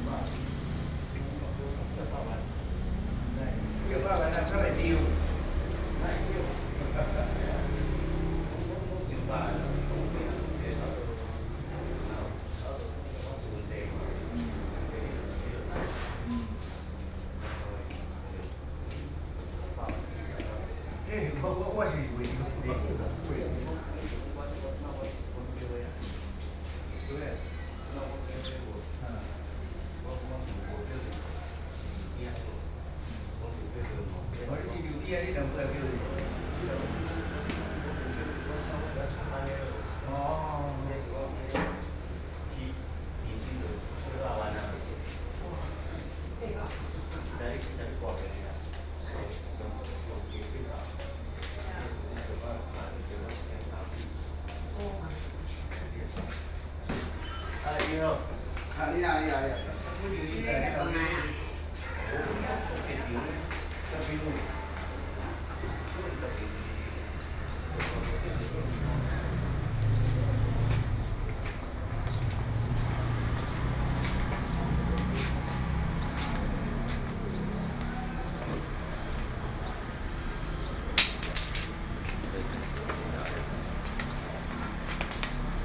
清水祖師廟 Zushi Temple, Sanxia Dist. - Walking in the temple
Walking through the different floors of the temple
Binaural recordings
Sony PCM D50 + Soundman OKM II
Sanxia District, New Taipei City, Taiwan, 8 July 2012, ~07:00